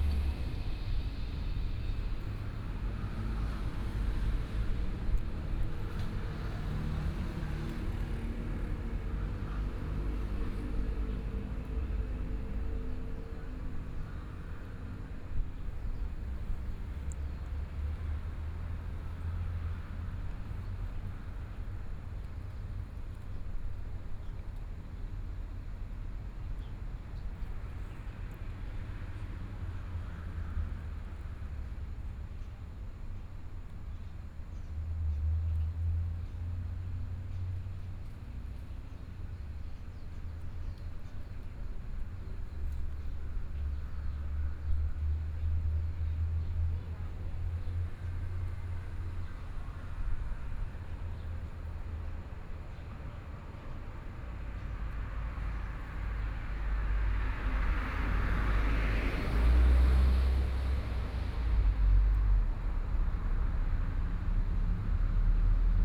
員山鄉永和村, Yilan County - Small village
Birds singing, Traffic Sound, Small village, At the roadside
Sony PCM D50+ Soundman OKM II